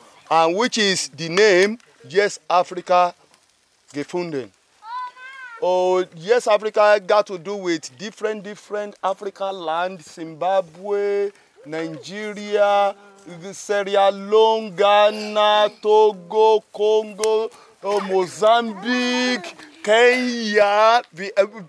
Allotment Garden, Hamm, Germany - Welcome to Yes-Afrika !
A sunny Allotment-garden near the tiny River Aase in Hamm/ Westfalen. Many African people, their friends and families are gathering, eating, drinking, dancing, and perhaps meeting each other for the first time. It’s the “know me, I know you” party of the newly-formed Yes Africa Verein. The founders and board members of the organization Nelli Foumba Saomaoro and Yemi Ojo introduce themselves and the organization and welcome everybody to get involved. While the party is getting into full swing, Nelli makes a couple of interviews with members and guests. Two samples are presented here.
August 2013